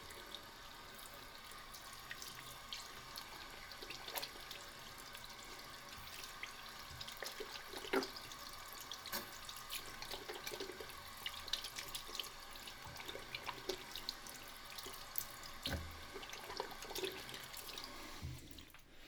Paris soundwalks in the time of COVID-19 - Thursday night soundwalk in Paris in the time of COVID19: Soundwalk
Thursday, October 15th 2020: Paris is scarlett zone fore COVID-19 pandemic.
Walking from Conservatoire Supérieure de Musique et de Danse de Paris to Gare du Nord to airbnb flat. Wednesday evening was announced the COVID-19 curfew (9 p.m.- 6 a.m.) starting form Saturday October 17 at midnight. This is -3 night before.
Start at 10:07 p.m. end at 10:42 p.m. duration 35’23”
As binaural recording is suggested headphones listening.
Both paths are associated with synchronized GPS track recorded in the (kmz, kml, gpx) files downloadable here:
For same set of recordings go to: